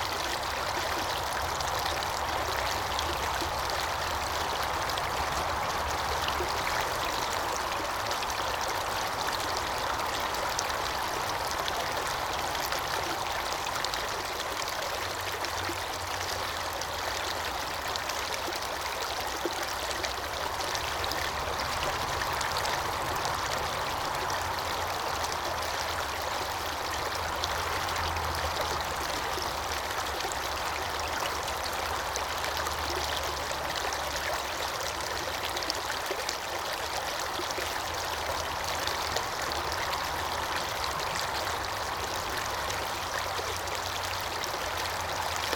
Listening by the stream through the Glen of the Downs Nature Reserve, Co. Wicklow, Ireland - The stream through the Glen
This is the sound of the stream running through the Glen of the Downs, combined with the stream of traffic that runs through the N11. Recorded with EDIROL R09.
29 July 2017, 13:30